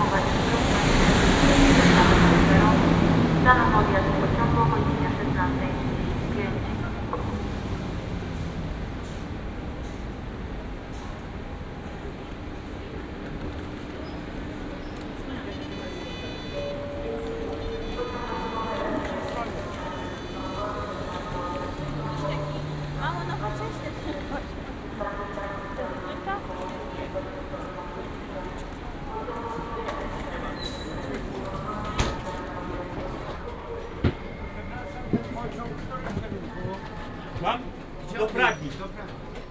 Train anouncements at the railway station.
binaural recording with Soundman OKM + ZoomH2n
sound posted by Katarzyna Trzeciak